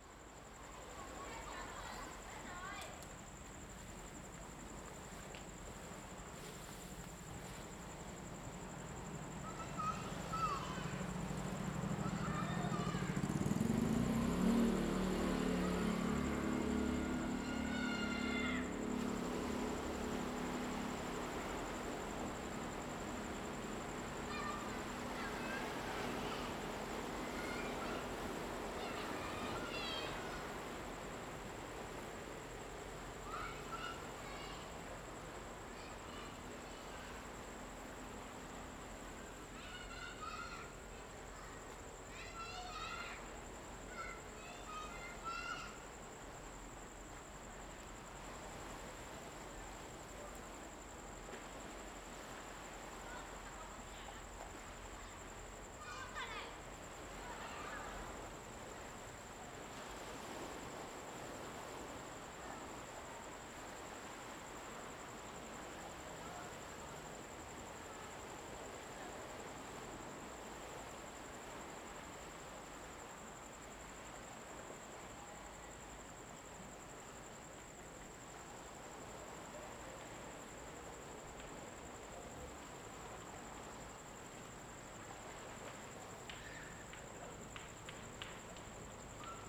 Small fishing village, Sound of the waves
Zoom H2n MS+XY
1 November 2014, 19:24